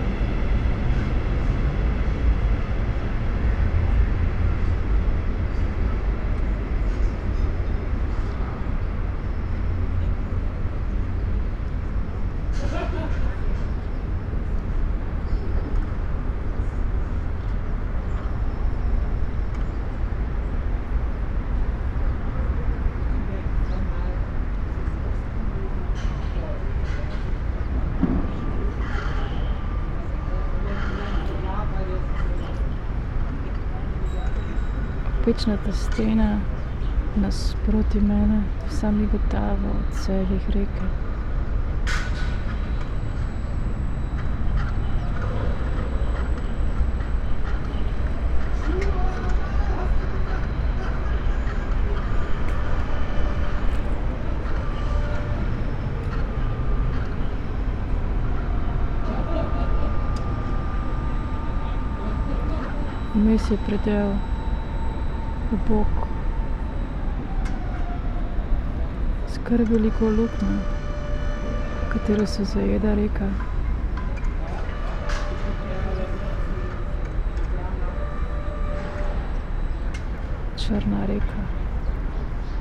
Paul-Thiede Ufer, Mitte, Berlin, Germany - black waters
construction works behind me and across the river Spree at S-Bahn station Jannowitzbrücke, red brick walls twinkle as sun reflects with filigree river waves pattern, spoken words
Sonopoetic paths Berlin